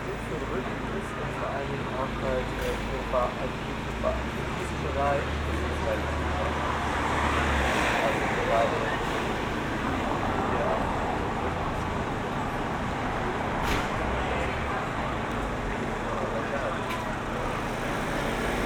Berlin: Vermessungspunkt Friedelstraße / Maybachufer - Klangvermessung Kreuzkölln ::: 07.07.2012 ::: 01:04